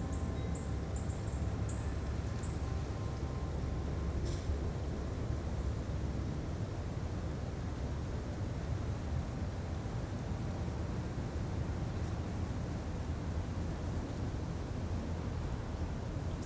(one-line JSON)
{"title": "Glendale Ln, Beaufort, SC, USA - Glendale Lane - Marsh", "date": "2021-12-27 15:30:00", "description": "Recording in the marsh surrounding a street in Beaufort, South Carolina. The area is very quiet, although some sounds from a nearby road do leak into the recording. There was a moderate breeze, and wind chimes can be heard to the right. Birds and wildlife were also picked up. The mics were suspended from a tree branch with a coat hanger. A low cut was used on the recorder.\n[Tascam Dr-100mkiii & Primo EM-272 omni mics]", "latitude": "32.41", "longitude": "-80.70", "altitude": "11", "timezone": "America/New_York"}